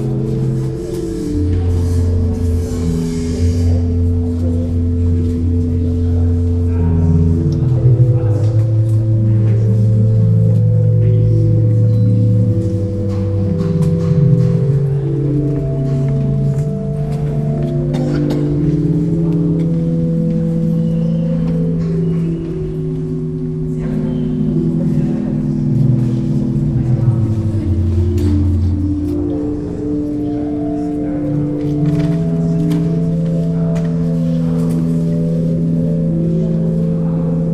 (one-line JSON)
{"title": "Organ in the Gedächtniskirche", "date": "2010-09-22 13:35:00", "description": "Organ of the new Kaiser-Wilhelm-Gedächtniskirche with construction workers working on the old part of the Kaiser-Wilhelm-Gedächtniskirche in the background.", "latitude": "52.50", "longitude": "13.33", "timezone": "Europe/Berlin"}